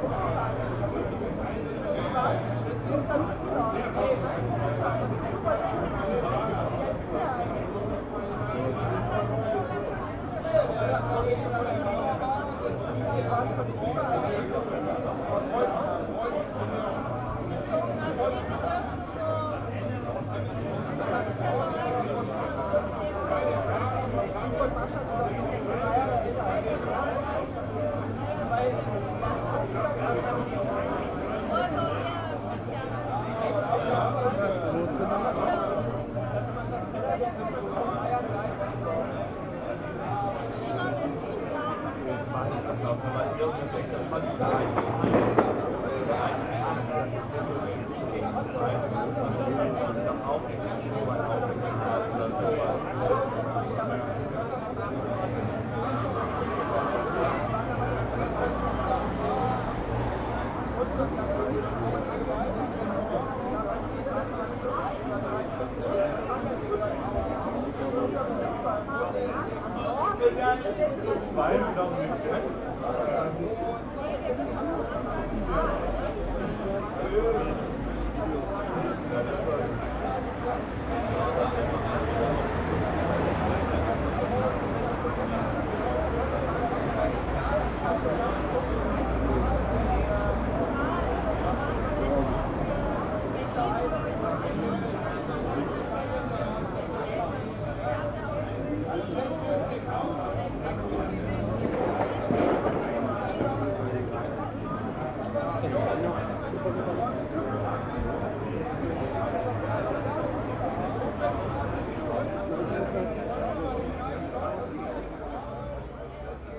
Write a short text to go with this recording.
the city, the country & me: may 29, 2011